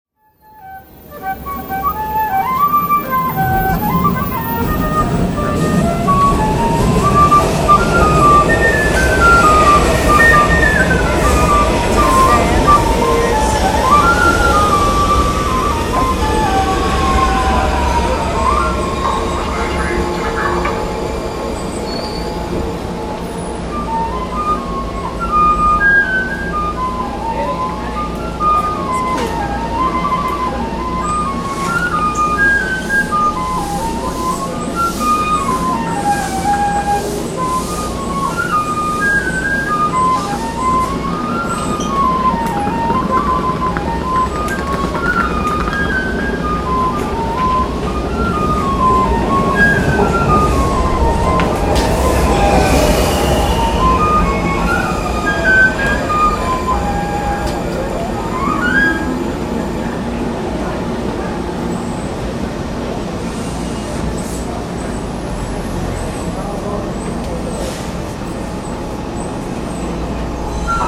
Metro Center Station DC
Flute player, metro train, commuter crowds
Washington, DC, USA, 16 September